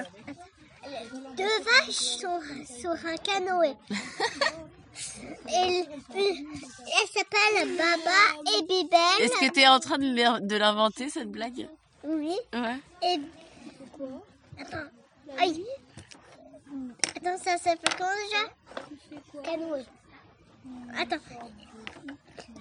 Rue du Schnokeloch, Strasbourg, France - children jokes in the schoolyard
children sharing jokes and funny stories in the yard of the school during summer holydays